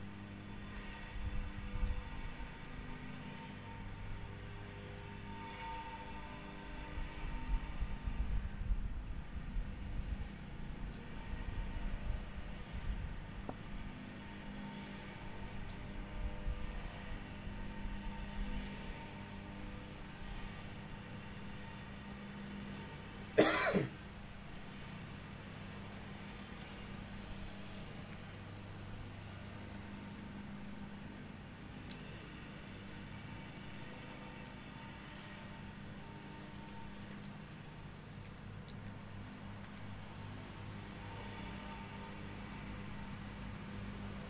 Kaiser-Wilhelm-Platz, Berlin, Deutschland - park
small roadside park in Berlin, drizzling rain
"h2 handyrecorder"
Berlin, Germany, 9 January 2013